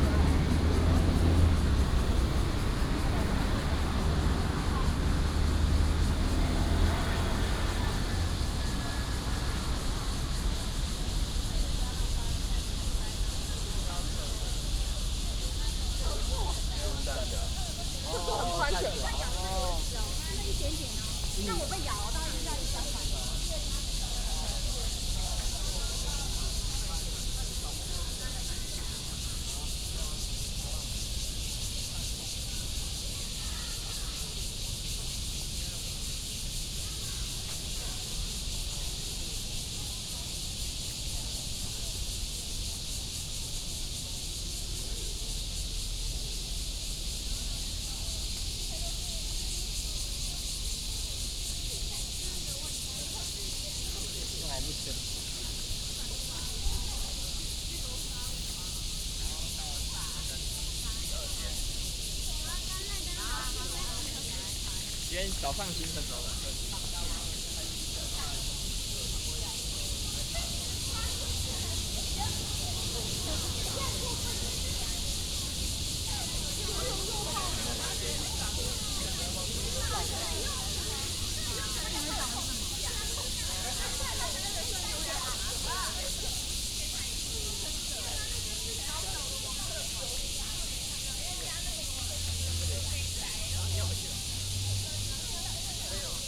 Zhoushan Rd., Da’an Dist., Taipei City - Walking in the university
Walking in the university, Visitor, Cicadas cry